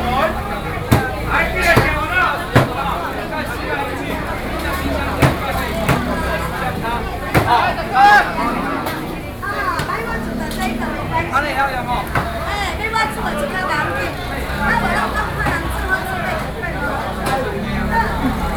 Lane, Section, Sānhé Rd, New Taipei City - Traditional markets